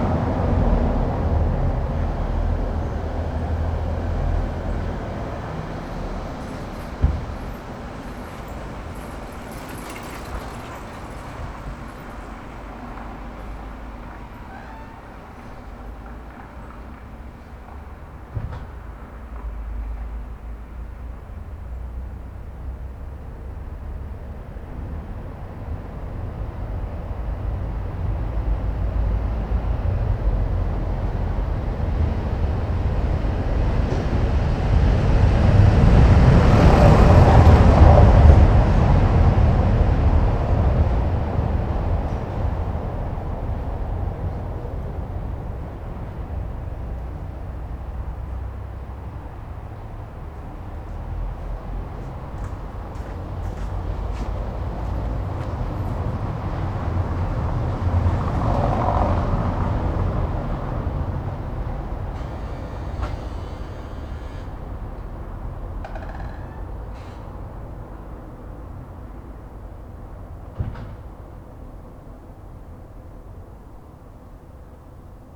berlin: friedelstraße - the city, the country & me: night traffic
the city, the country & me: june 3, 2011
3 June 2011, ~02:00